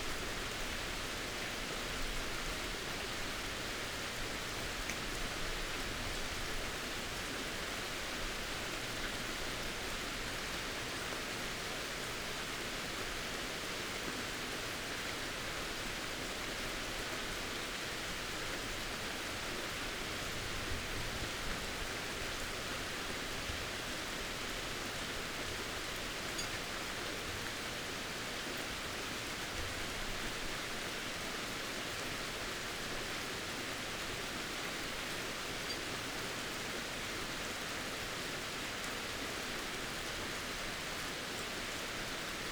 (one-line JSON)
{"title": "Thunder over Chuncheon Lake (early August) 춘천호수 천둥(8월 시작때에)", "date": "2020-08-07 22:30:00", "description": "Thunder over Chuncheon Lake (early August)_춘천호수 천둥(8월 시작때에)...recorded at the beginning of the monsoon season...this year there were continuous rains and daily thunder storms throughout August and into September...this was recorded late at night in a 8-sided pagoda on the edge of Chuncheon lake...the sounds reverberate off the surrounding hills and travel clearly over the lake...", "latitude": "37.87", "longitude": "127.69", "altitude": "91", "timezone": "Asia/Seoul"}